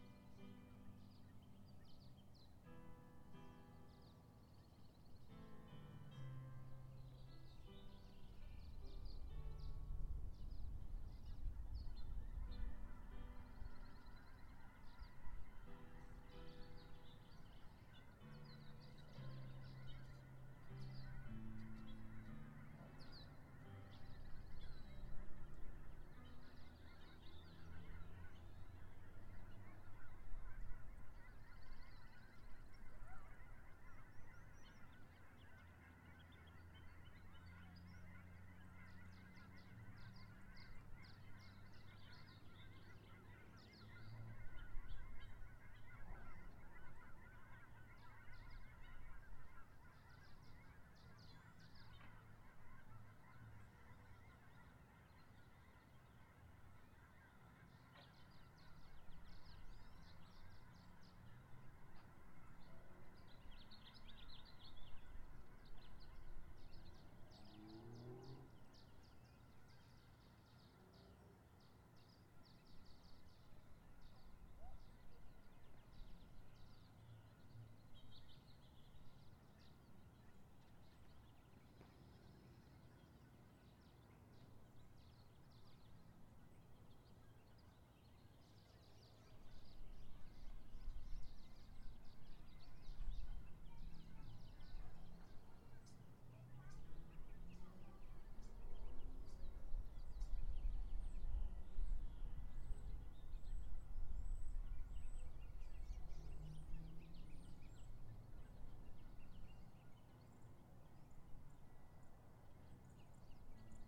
M St, Washougal, WA, USA - Drive up Easter Service
The Methodist Church sits at the bottom of the hill as you drive into our neighborhood. This morning, they held Easter Service in the parking lot. It was calm, with only a slight breeze. Sounds from the service- music, worship, and the prayers for those suffering during the pandemic- drifted up the street towards my house and mixed with the sounds of birds, kids walking dogs, passing cars, distant freight train moving through our little town, and even the Easter bunny passing by on his harley. Warm sun and blue skies a welcome change after the long, grey winter in the PNW. I used a TASCAM DR-40, which was a gift from my mentor at the low power, volunteer radio station KXRW Vancouver. I mounted it to a PVC pipe, and placed it on the tripod of an old music stand.